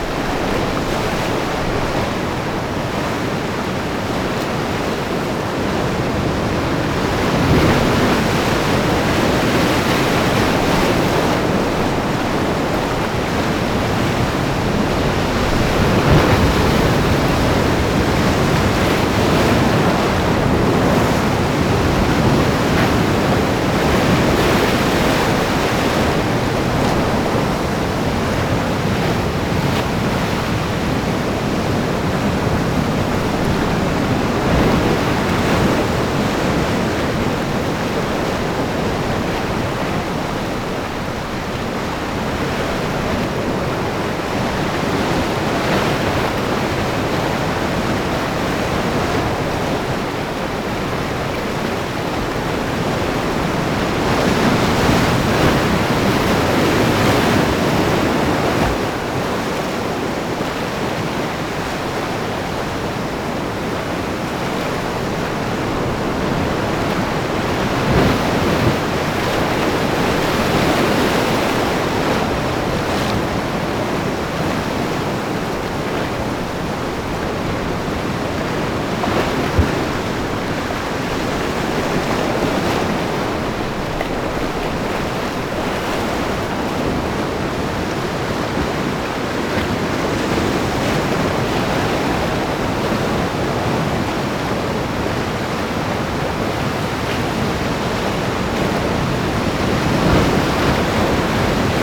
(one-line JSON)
{"title": "Frontera, Santa Cruz de Tenerife, España - Rugidos y susurros Atlánticos", "date": "2012-08-19 11:30:00", "description": "This recording was made in a place named El Charco Azul. Up in an artificial wall that limits the pool and the ocean. In that pools the local artisan live some time the winker to soft it before manipulate. Whith that soft winker they make different useful objects as baskets o big saddlebags used in agriculture.", "latitude": "27.76", "longitude": "-18.04", "altitude": "19", "timezone": "Atlantic/Canary"}